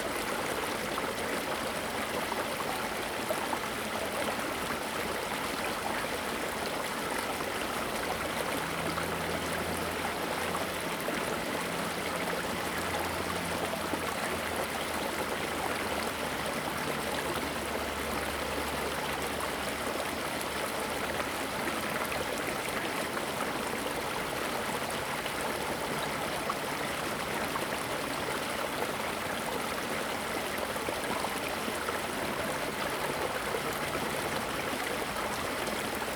埔里鎮桃米里, Nantou County - Irrigation channels
Irrigation channels, Flow sound, birds sound
Zoom H2n MS+XY
Puli Township, 桃米巷52-12號, 4 May 2016, ~18:00